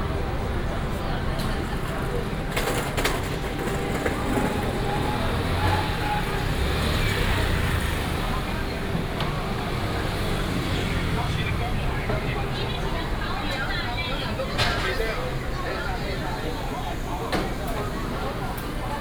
Walking in the night market, Traffic sound
Yilan County, Taiwan